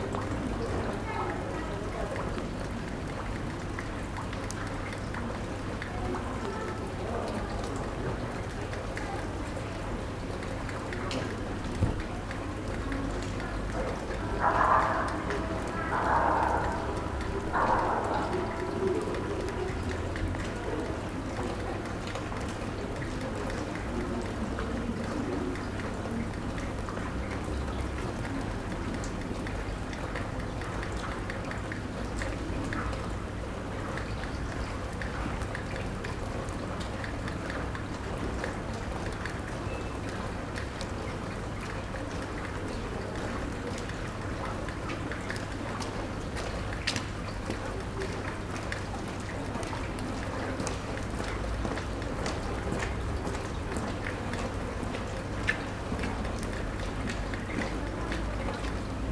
Strada Postăvarului, Brașov, Romania - 2020 Christmas in Brasov, Transylvania - A Suprisingly Calm Street
It was Christmas yesterday, so I took a walk through the historical city centre. Despite the pandemic there were many people on the streets. Yet as usual, they pack the "main street" of sorts (think Oxford Street in London) but a couple of steps away there's a parallel street which is almost empty so you can hear rain drops and roof drainpipes. Recorded with Superlux S502 Stereo ORTF mic and a Zoom F8 recorder.
România, 25 December 2020, 17:55